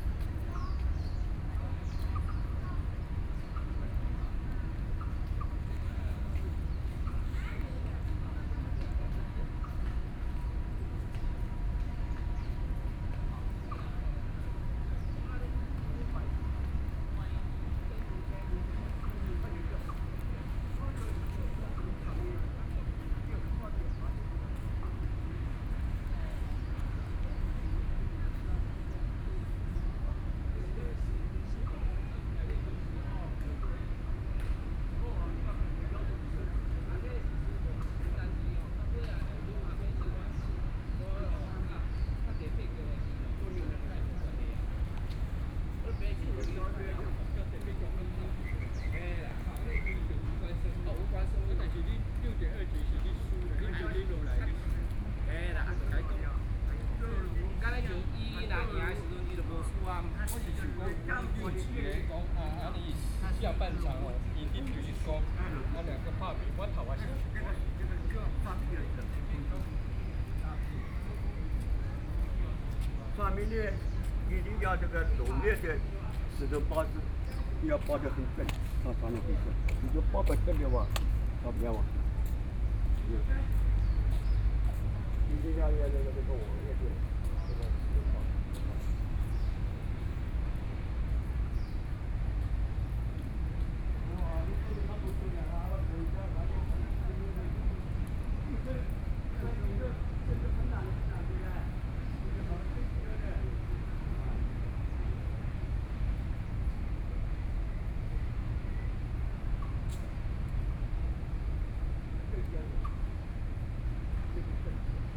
Taipei Botanical Garden - Hot and humid afternoon
Hot and humid afternoon, Foreign caregivers and people coming and going, Sony PCM D50 + Soundman OKM II
Zhongzheng District, Taipei City, Taiwan, 13 September 2013, ~17:00